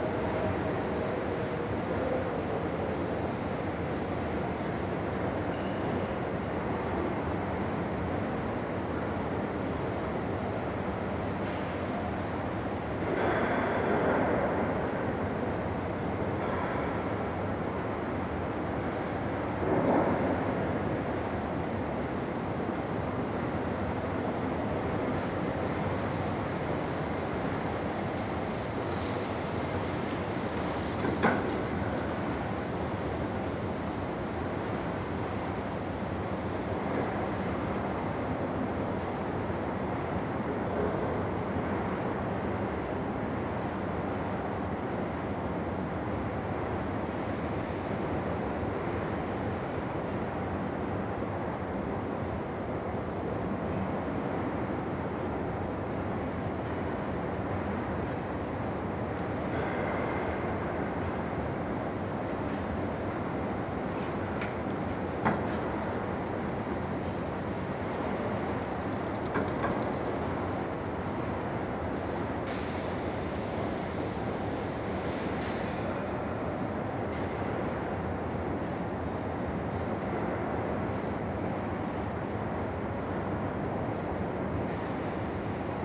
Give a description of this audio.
Pedestrian / cycle tunnel under the Schelde in Antwerp. Bicycles, footsteps and the rumble from the escalators at either end. Sony MS mic + DAT recorder